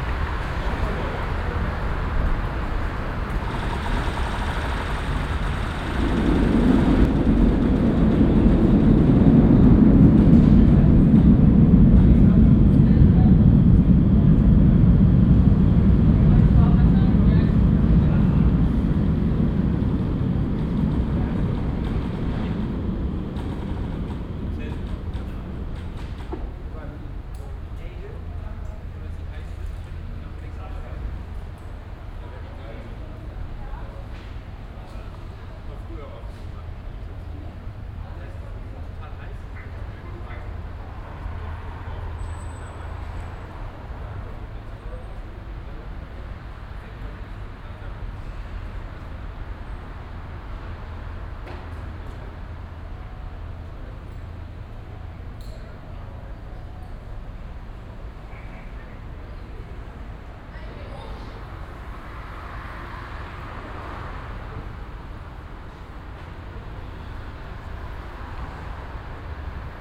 cologne, altstadtufer, unter deutzer brücke
unter der deutzer bücke, mittags, fahrzeuge und strassenbahnüberfahrt
soundmap nrw: social ambiences/ listen to the people - in & outdoor nearfield recordings
29 December 2008